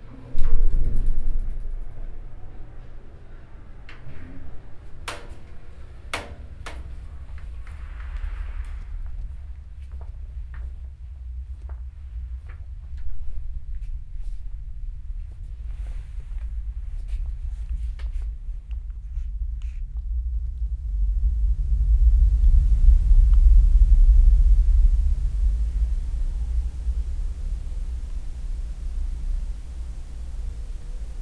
Mitte, Berlin, Germany - The Room of Silence
(binaural)Field recordings of 'The Room of Silence'
11 August 2013, ~13:00